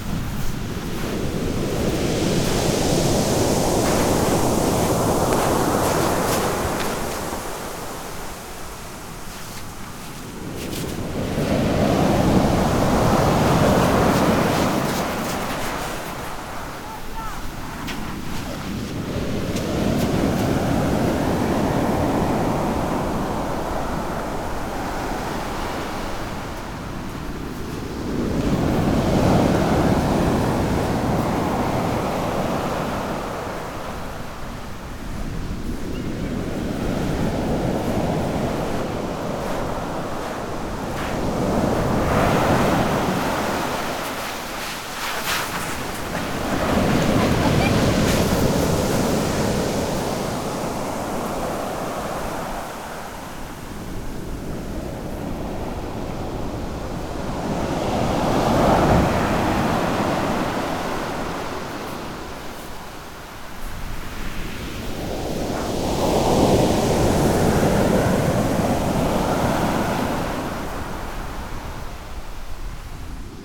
the sea after a stormy night, after a big wave, the recordist hits the ground
Milazzo ME, Italy